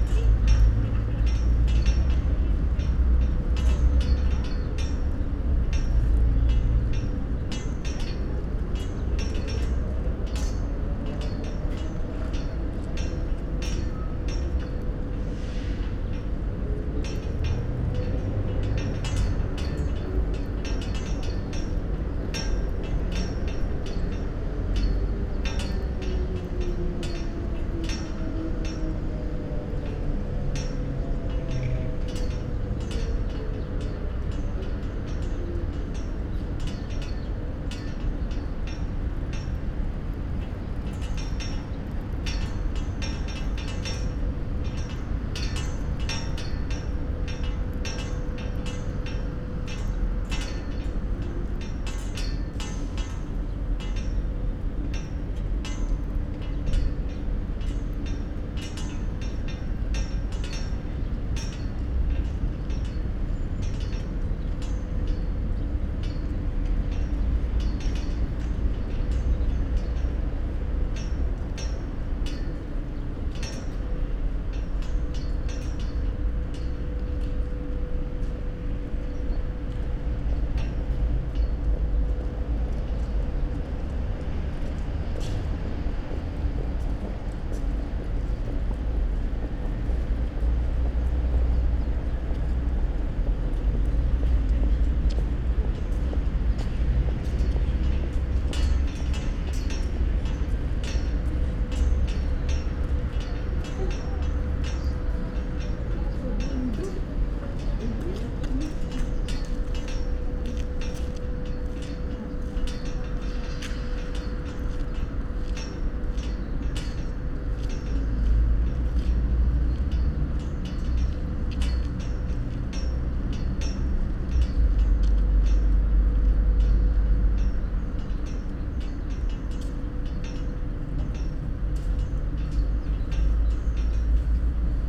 Vilnius, Lithuania, flagpoles
flagpoles at the National Opera Theatre